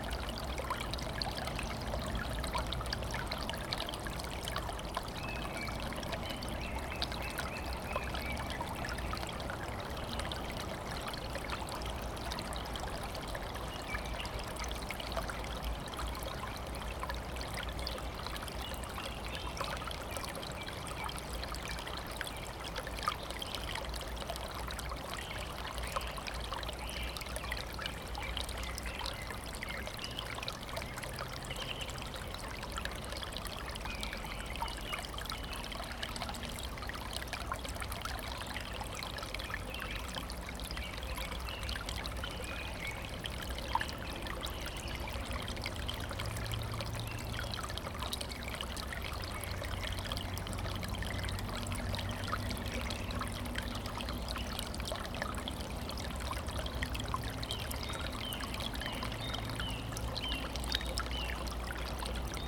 Unnamed Road, Московская обл., Россия - The sound of a stream flowing out of a spring
The sound of a stream flowing out of a spring near Voronino is heard. You can also hear the singing of birds and cars passing nearby.
Recorded on Zoom H2n
Центральный федеральный округ, Россия, 25 April 2021